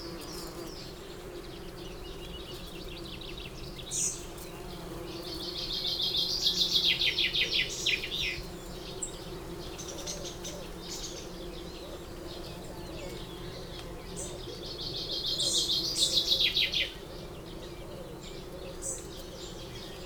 Green Ln, Malton, UK - lime tree buzzing ...
Lime tree buzzing ... bees ... wasps ... hoverflies ... etc ... visiting blossom on the tree ... open lavaliers on T bar on telescopic landing net handle ... bird song and calls from ... goldfinch ... chaffinch ... chiffchaff ... wood pigeon ... song thrush ... wren ... blackbird ... tree sparrow ... great tit ... linnet ... pheasant ... some background noise ...